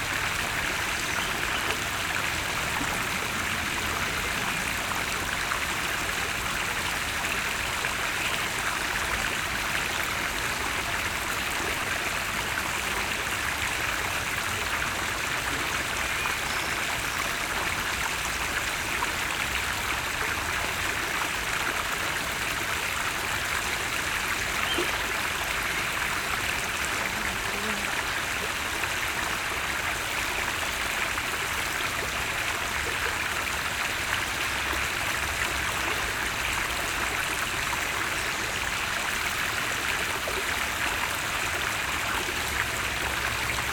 The two rivers Argentine and Mazerine confluence.
La Hulpe, Belgium, May 2017